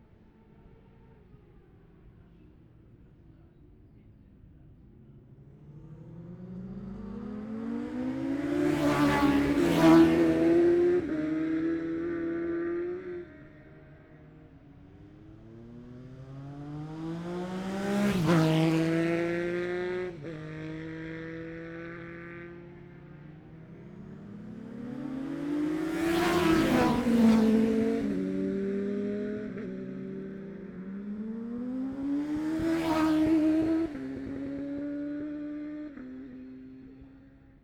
Jacksons Ln, Scarborough, UK - olivers mount road racing 2021 ...
bob smith spring cup ... classic superbikes practice ... luhd pm-01 mics to zoom h5 ...